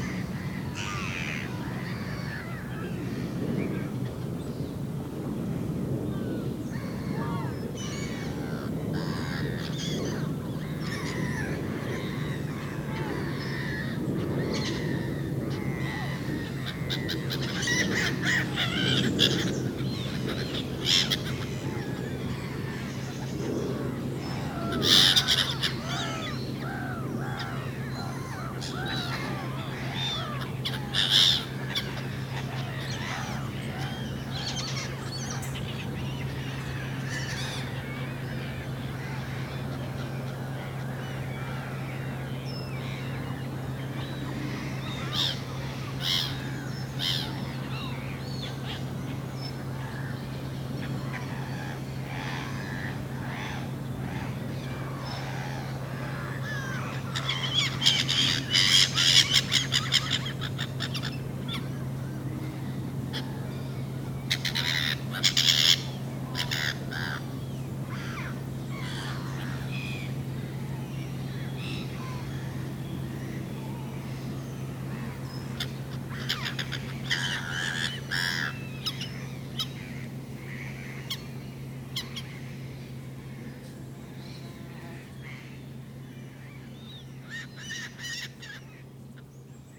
Seagulls are discussing on the pond, early morning.